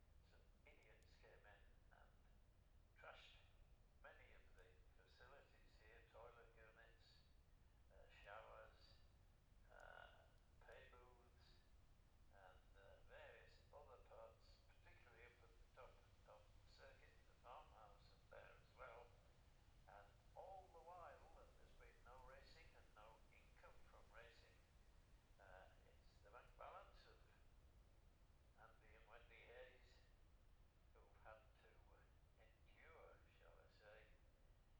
Jacksons Ln, Scarborough, UK - gold cup 2022 ... sidecar practice ...
the steve henshaw gold cup 2022 ... sidecar practice ... dpa 4060s on t'bar on tripod to zoom f6 ...